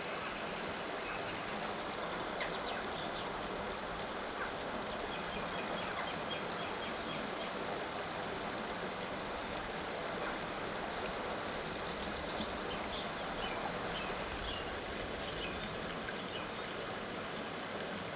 Tyne Steps Garrigill, Alston, UK - Tyne Steps
In Garrigill there is a bridge over the River South Tyne and down below there is a wee sitting area. Which were designed by Peter Lexie Elliott circa 2000. Bit tricky to get down to, so please don't try when wet. And in typical country side style, shortly after I hit record a farm vehicle comes driving over the bridge. You can hear in the recording, with binaural microphones, that I'm moving around having a look under the bridge from the steps.
North West England, England, United Kingdom, 27 March 2022, ~18:00